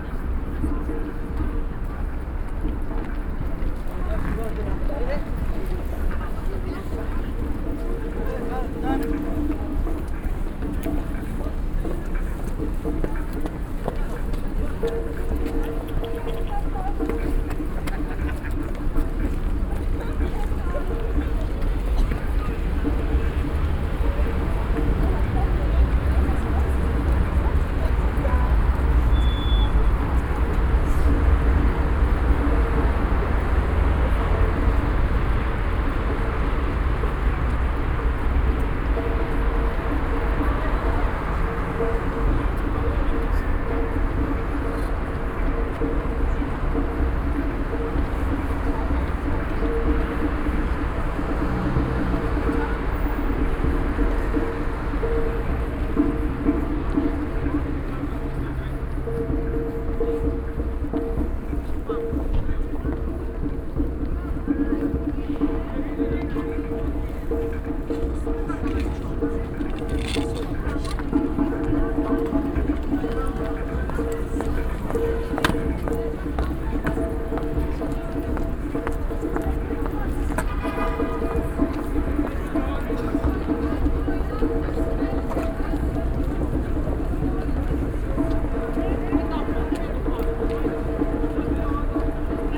Katharinen-Treppe, Dortmund, Germany - onebillionrising, steps n drum...
...starting at the St Katherine steps and walking towards the meeting point for the onebillionrising dance/ campaign / “flash mop”; enjoying the sound of steps rushing upstairs and downstairs; the sound of a drum getting closer, voices louder…
global awareness of violence against women
14 February, 16:00